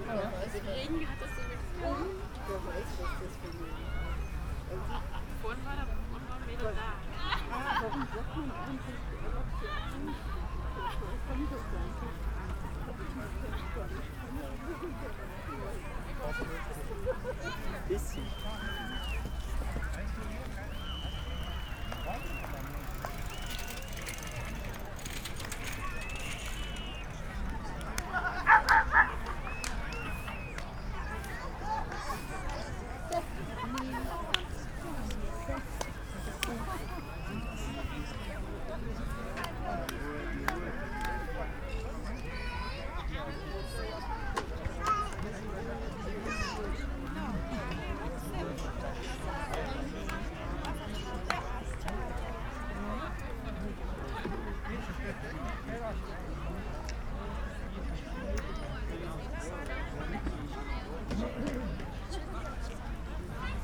Tempelhofer Feld, Berlin, Deutschland - Allmende, urban gardening
urban gardening project called Allmende, on the former airport field. The area has grown a lot within the last years. Plants are grown in wooden boxes above the ground, because of the possibly polluted soil. On summer evenings, many people hang out here enjoying sunset.
(Sony PCM D50, DPA4060)
26 July 2015, ~22:00